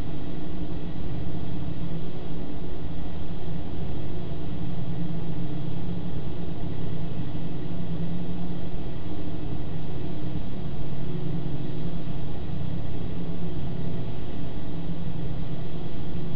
Near the hydro-electric dam
Vibrations from nearby the 의암 dam. Due to prolonged heavy rainfall the dam has been opened to allow a large volume of water to flow down the northern Hangang river system.